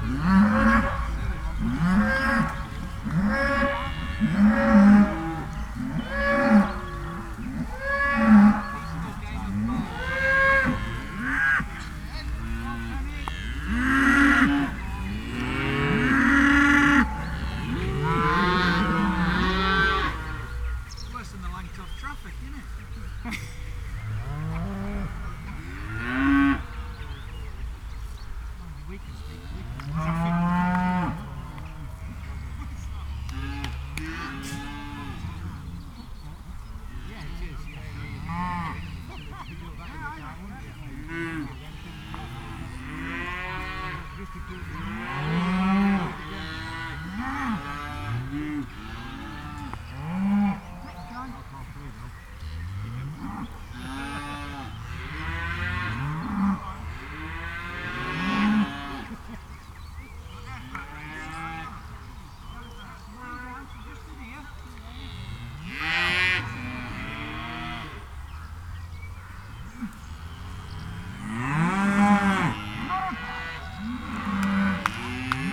Sledmere - Playing bowls with a cow chorus ...
Playing bowls with a cow chorus ... bowls rink is in a field with a large herd of cattle ... open lavalier mics clipped to sandwich box ... bird calls ... jackdaw ... house martins ... pied wagtail ... traffic noise ...